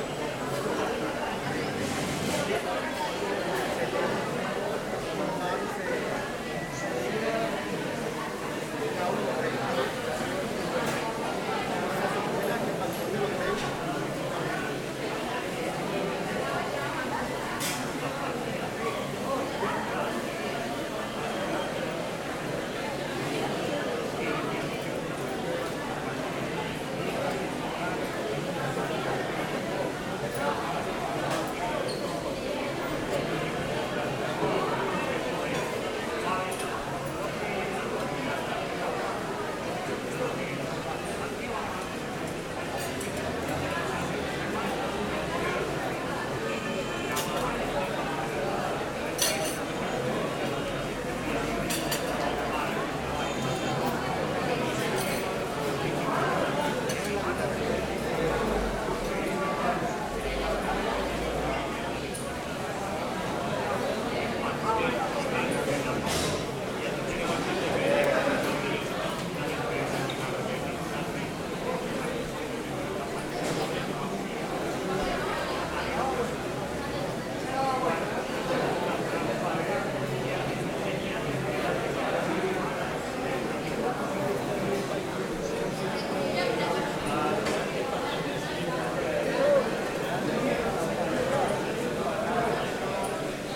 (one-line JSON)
{"title": "Cl., Medellín, Antioquia, Colombia - Zona de comida ingeniería", "date": "2021-09-24 12:54:00", "description": "Zona de comidas ingeniería Universidad de Medellín, día soleado, hora de almuerzo.\nCoordenadas: LN 6°13'48 LO 75°36'42\nDirección: Universidad de Medellín - Zona de comida Ingeniería\nSonido tónico: Conversaciones, pasos\nSeñal sonora: ruidos de sillas y platos\nGrabado con micrófono MS\nAmbiente grabado por: Tatiana Flórez Ríos - Tatiana Martinez Ospino - Vanessa Zapata Zapata", "latitude": "6.23", "longitude": "-75.61", "altitude": "1571", "timezone": "America/Bogota"}